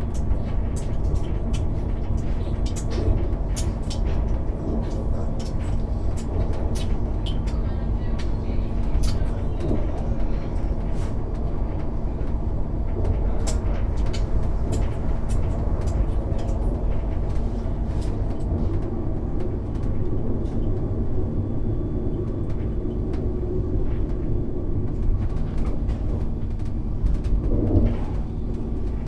wed 06.08.2008, 12:40am
very fast ICE train cologne direction frankfurt. mother feeds baby, which is almost falling asleep but makes funny smacking sounds while eating.
6 August